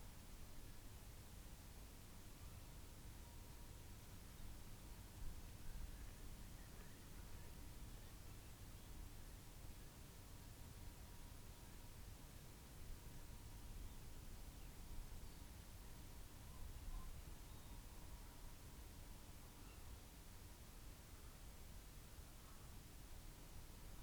Three owl calls ... tawny ... little ... barn ... open lavalier mics clipped to hedgerow ... bird calls from ... curlew ... pheasant ... skylark ... redwing ... blackbird ... some background noise ... tawny calling first ... later has an altercation with little owl ... barn owl right at the end of track ... lots of space between the sounds ...
Malton, UK